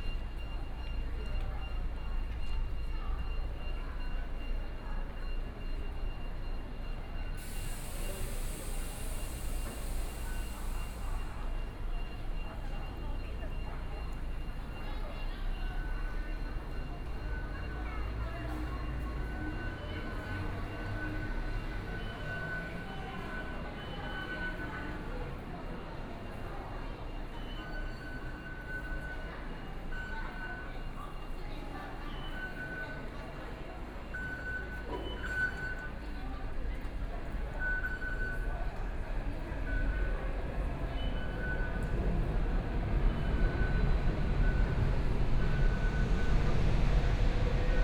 Yuanshan Station, Zhongshan District - Walking in the station
Walking in the station, Binaural recordings, Zoom H4n+ Soundman OKM II
Datong District, Taipei City, Taiwan, January 2014